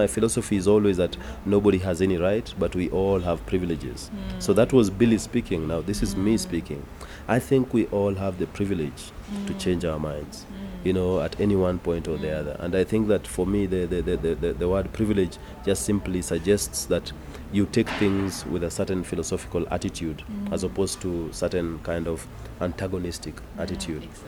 GoDown Art Centre, South B, Nairobi, Kenya - Security, Freedom and Public space…?
We are sitting with Jimmy, outside his office, in the courtyard Café of the GoDown Art Centre. The afternoon traffic on the dusty road outside the gate is relentless, and all kinds of activities going on around us; but never mind.. here we are deeply engaged in a conversation around freedom, art and public space… A day before, performance artist Ato had been arrested during her performance “Freedumb” outside the Kenya National Archives. Jimmy had been involved in the negotiations with the chief of police that followed…
“Jimmy Ogonga Jimmy is a vagrant amnesiac. from time to time, he takes photographs, makes videos, talks (negatively) too much and scribbles with the intention that someone might read his nonsense.he occupies a small white space, which he calls CCAEA, where he spends too much time. his first family is in nairobi, so chances are high that he might be there too, most of the time.”